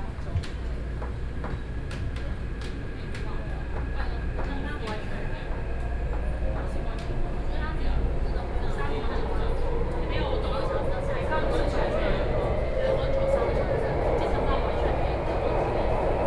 Beitou, Taipei City - Inside the MRT
Inside the MRT, Sony PCM D50 + Soundman OKM II